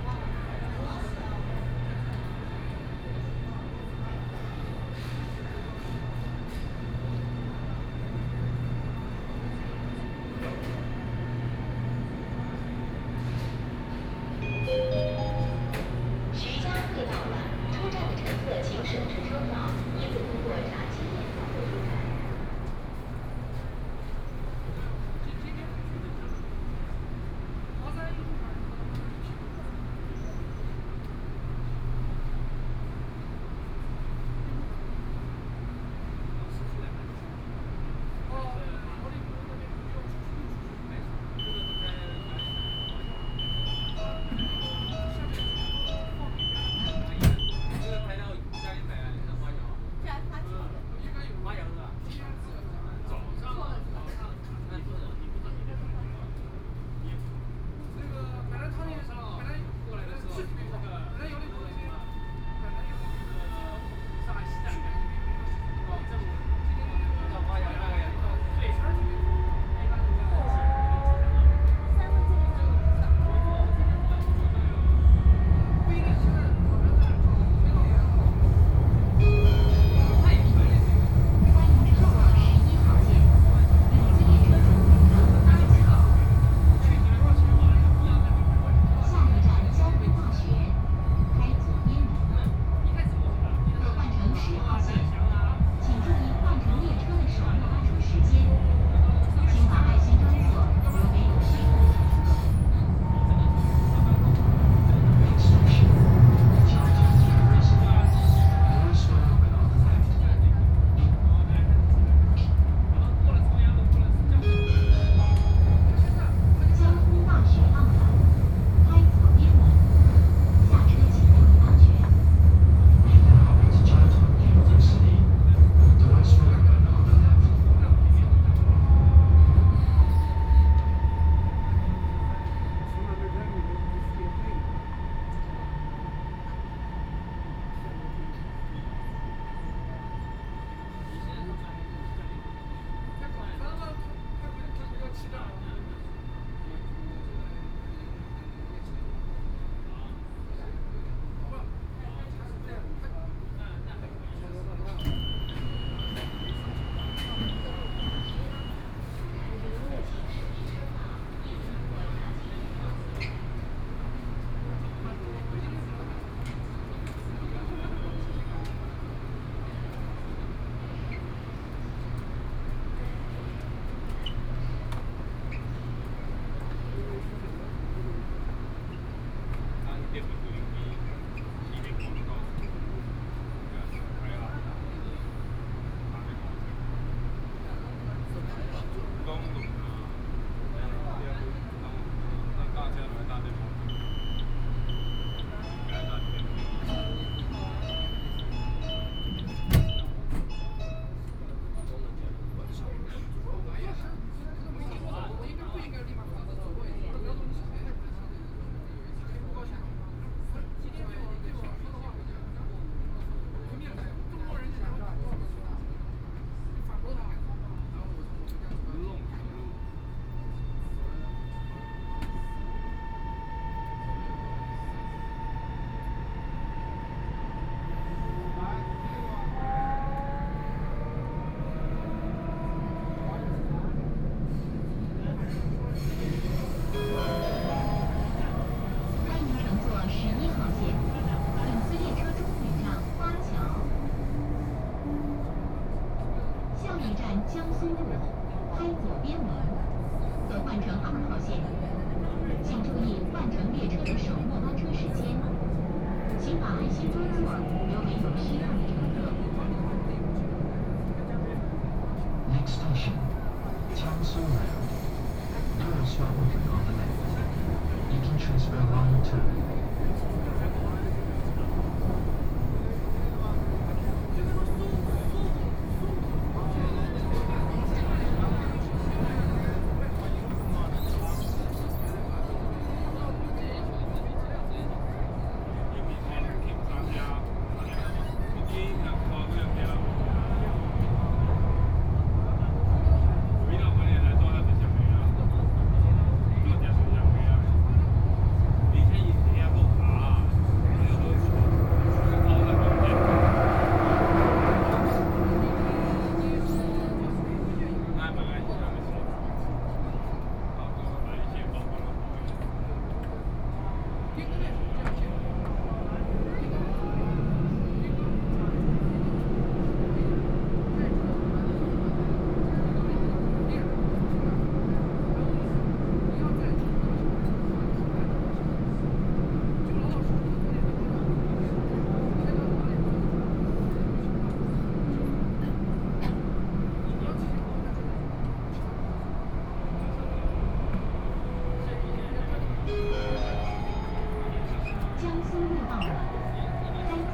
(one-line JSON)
{"title": "Changning District, Shanghai - Line 11(Shanghai Metro)", "date": "2013-11-23 15:54:00", "description": "from Xujiahui station to Jiangsu Road station, Crowd, Binaural recording, Zoom H6+ Soundman OKM II", "latitude": "31.21", "longitude": "121.43", "altitude": "7", "timezone": "Asia/Shanghai"}